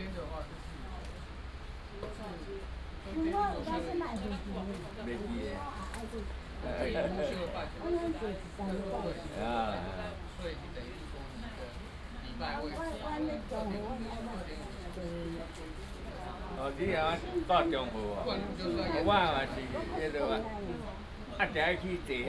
烏來區烏來里, New Taipei, Taiwan - At the bus station
At the bus station, Ready to take the bus